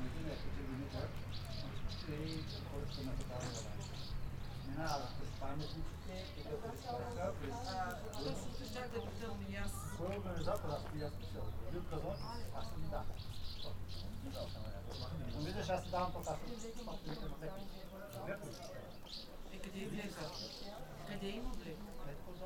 Buzludzha, Bulgaria, Entrance - In front of Buzludzha
In front of the ruin of the monument of Buzludzha there are swallows to be heard, water drops falling from the construction, voices of visitors and the policeman, who looks that noone enters the building. Two workers who paint a hiking trail pass by and draw their mark on the building.